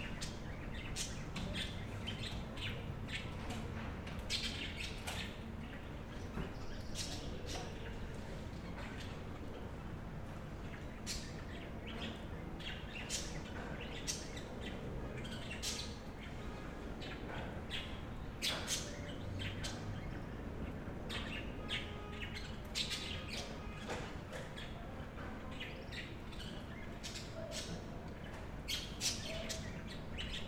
Cra., Bogotá, Colombia - Suba - Barrio Popular
Barrio Popular en la localidad de Suba (Suba los Monarcas)